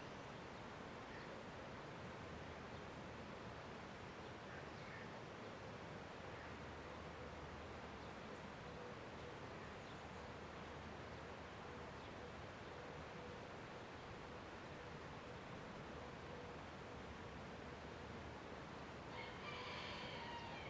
Τα Παπάκια, Πινδάρου, Ξάνθη, Ελλάδα - Park Nisaki/ Πάρκο Νησάκι- 11:00
River flow, people talking distant, rooster crowing.
Περιφερειακή Ενότητα Ξάνθης, Περιφέρεια Ανατολικής Μακεδονίας και Θράκης, Αποκεντρωμένη Διοίκηση Μακεδονίας - Θράκης, 12 May 2020, 11:00